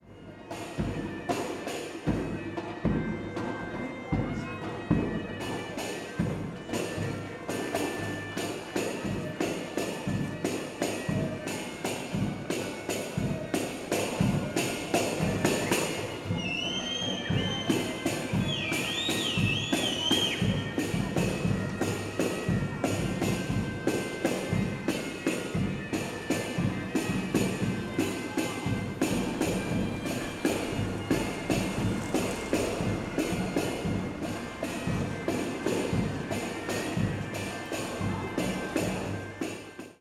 berlin sanderstr. - wedding, music
turkish-pakistani wedding, palestinian band plays in the street on drums and bagpipe, a leftover of british soldiers
15 January 2011, ~18:00